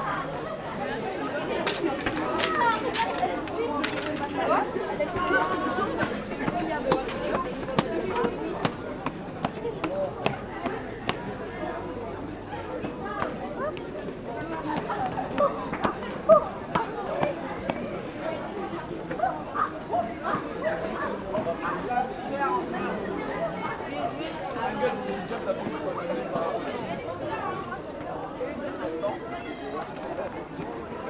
L'Hocaille, Ottignies-Louvain-la-Neuve, Belgique - St-Jean-Baptist walk
750 students of the St-Jean Baptist college went to see the film called "Tomorrow", about sustainable development. They walk back by feet, from Louvain-La-Neuve to Wavre (8 km). I follow them during a short time.
Ottignies-Louvain-la-Neuve, Belgium